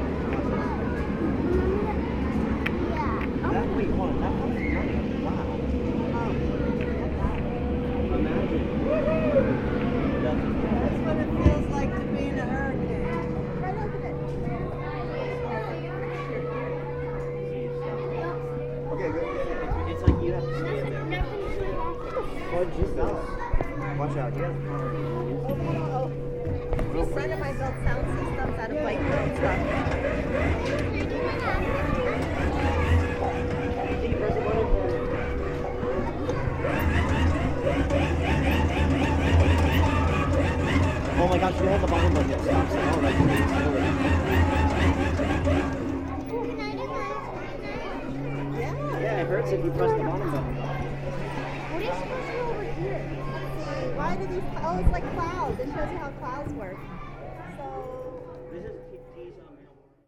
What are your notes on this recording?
Messing with fun things at the Science Center.